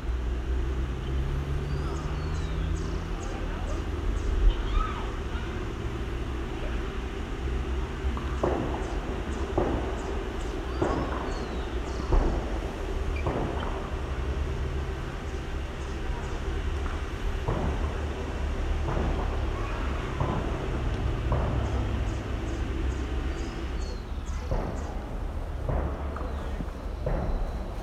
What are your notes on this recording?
In this audio you will hear how the Santa Ana park sounds in the afternoon with sounds of birds, cars, children screaming and running, in the distance you can also hear a game of tennis and shots from the practice site of the Colombian army